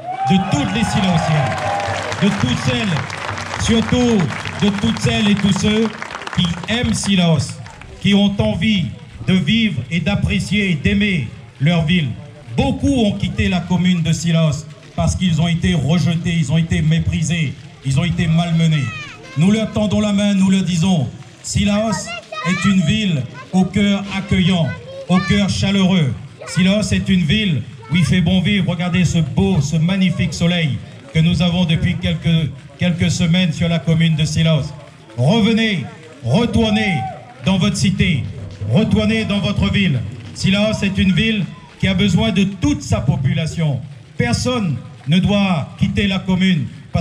Rue du Pere Boiteau, Réunion - 20200705 1441-1510 remise de l-ercharpe du maire de CILAOS
20200705_1441-1510_remise_de_l-ercharpe_du_maire_de_CILAOS